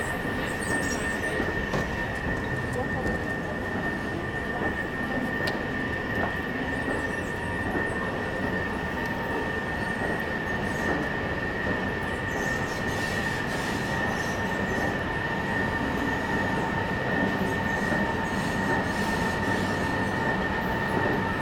recorded some transportation devices while waiting for a train arrival.
Berlin, Germany, 20 February 2011